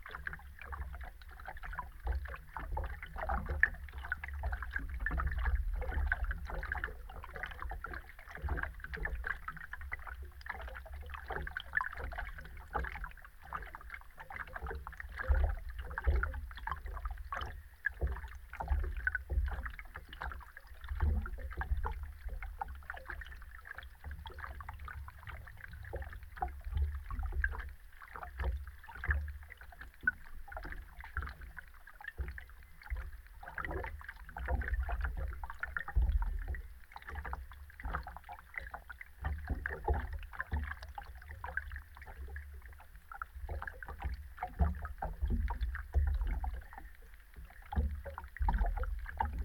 Antakalnis, Lithuania, hydrophone at the bridge
underwater microphone at the bridge